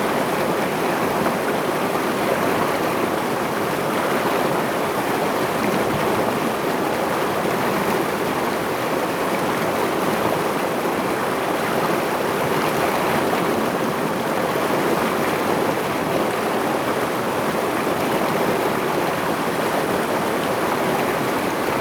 種瓜坑溪, 埔里鎮成功里, Taiwan - In the middle of the river
In the middle of the river
Zoom H2n MS+XY
19 April 2016, 14:36